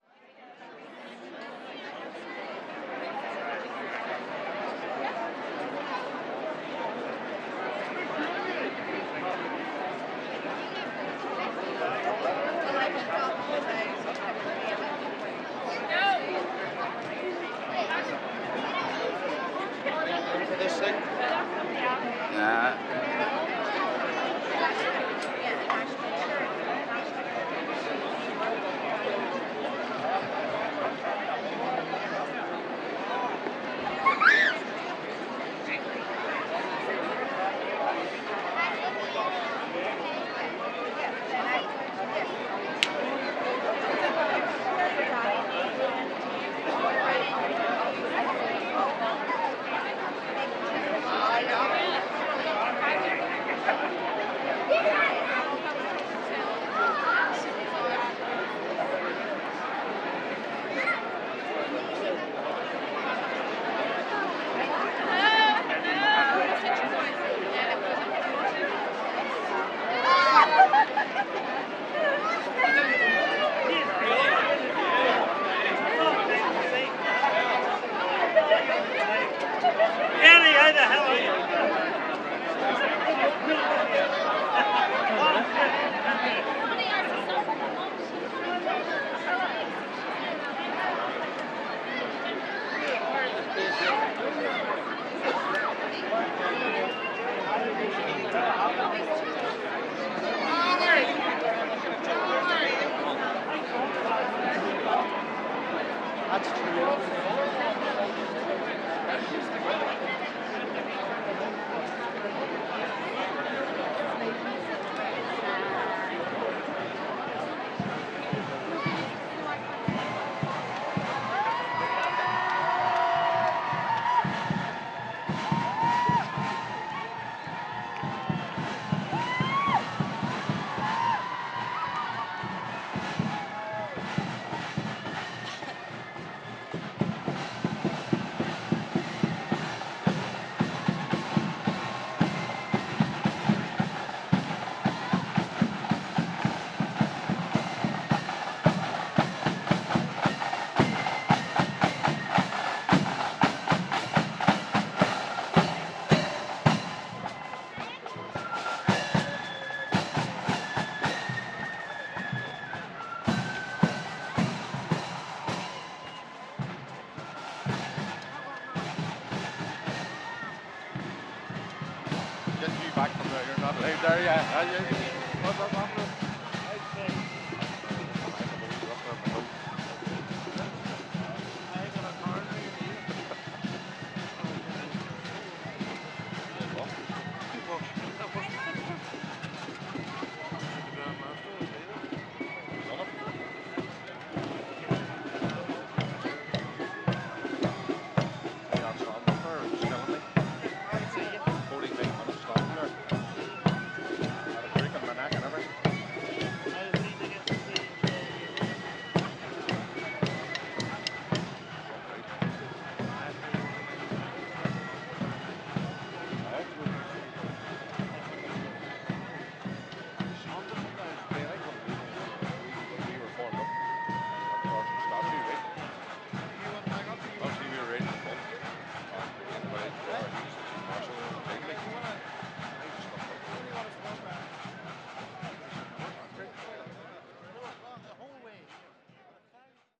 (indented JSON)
{
  "title": "Donegall Pl, Belfast, UK - Northern Ireland Centennial Parade – May 28, 2022",
  "date": "2022-05-28 15:00:00",
  "description": "Due to the Covid-19 pandemic, the Northern Ireland Centennial Parade was delayed by one year. It was finally held on May 28, 2022, and hosted by The Grand Orange Lodge of Ireland. The parade featured around 130 bands and it began at the Stormont Estate, moving through to Belfast City Hall. This event marks the 100th birthday of Northern Ireland, with approximately 20,000 people in attendance. Recording is time-compressed to highlight a range of sounds from group gatherings, chants, whistles, bands, instruments, helicopters, vehicles, chatter, marching, drinking, and celebrating.",
  "latitude": "54.60",
  "longitude": "-5.93",
  "altitude": "14",
  "timezone": "Europe/London"
}